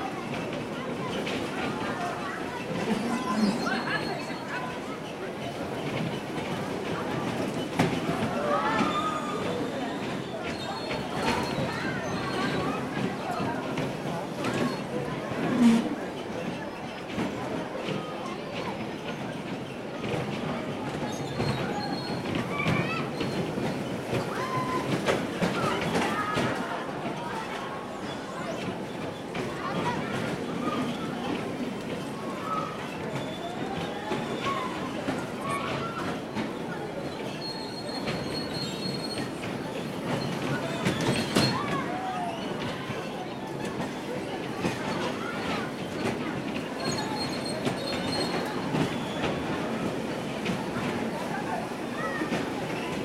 National amusement park, Ulaanbaatar, Mongolei - auto scooter
there are cars in mongolia for children, they drive but the parents have the remote control. this is a normal auto scooter - with the difference that there is no music that would made these sounds inaudible
Border Ulan Bator - Töv, Монгол улс